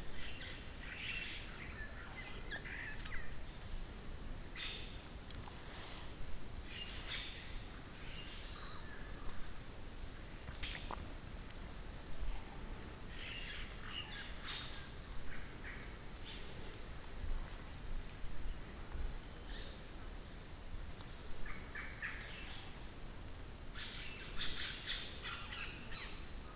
Reserva Nacional Tambopata, Peru - Rainforest atmospere
Rainforest atmosphere recorded in Tambopata National Reserve, Perú.